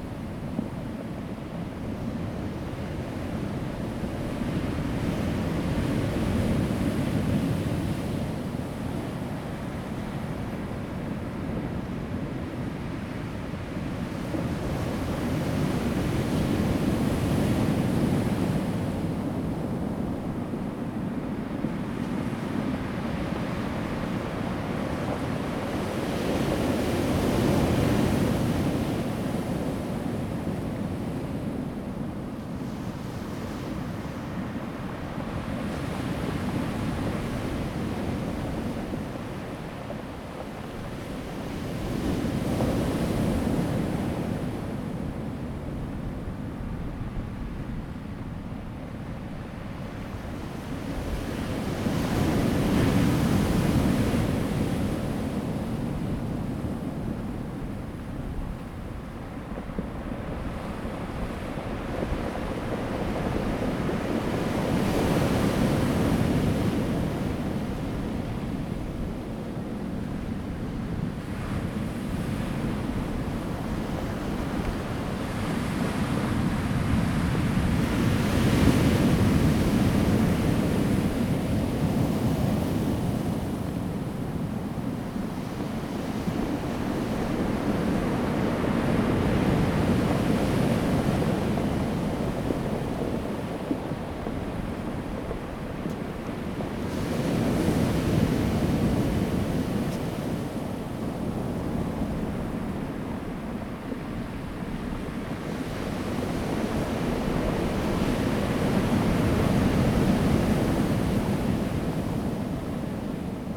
Sound of the waves, Rolling stones
Zoom H2n MS+XY